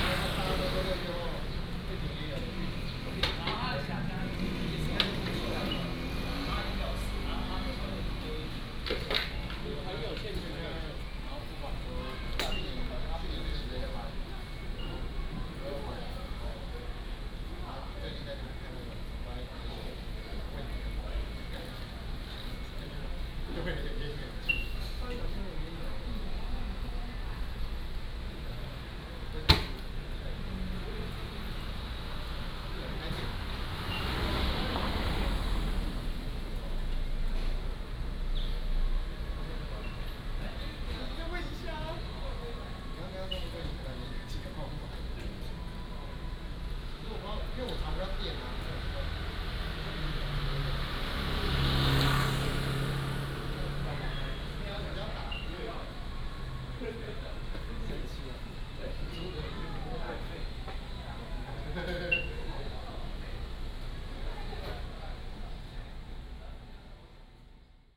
Dabei Rd., Shilin Dist. - Small alley
Small alley, in front of the Convenience store